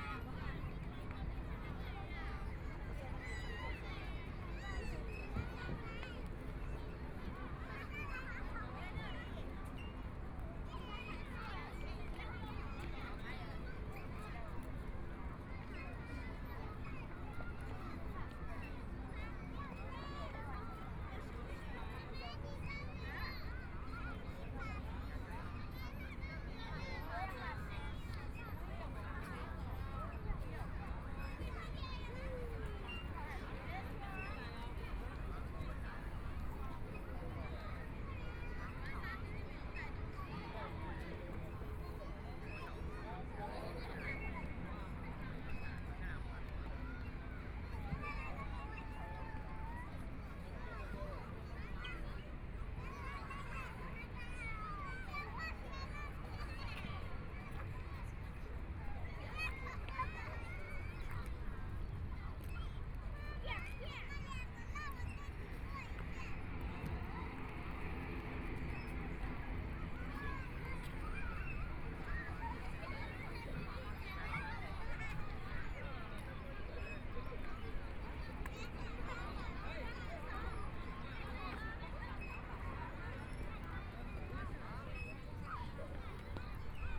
DaJia Riverside Park, Taipei City - Children play area
Children play area, Holiday, Sunny mild weather, Binaural recordings, Zoom H4n+ Soundman OKM II